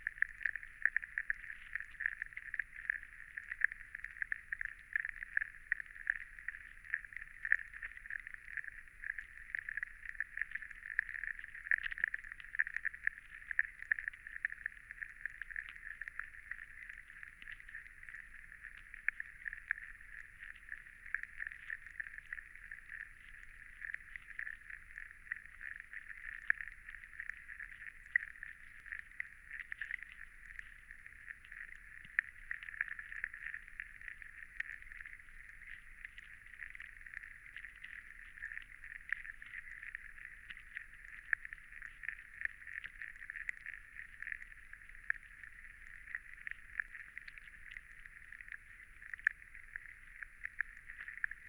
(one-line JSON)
{"title": "Cape Farewell Hub The WaterShed, Sydling St Nicholas, Dorchester, UK - Sydling Water :: Below the Surface 2", "date": "2022-04-09 11:45:00", "description": "The WaterShed - an ecologically designed, experimental station for climate-focused residencies and Cape Farewell's HQ in Dorset.", "latitude": "50.79", "longitude": "-2.52", "altitude": "103", "timezone": "Europe/London"}